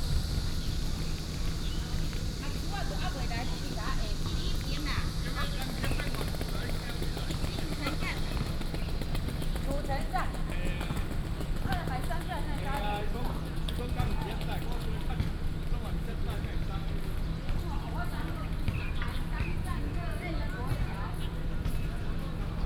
Haishan Rd., Tucheng Dist. - In the small park
In the small park, Close to school, Bird calls, Cicadas called
Binaural recordings
Sony PCM D50 + Soundman OKM II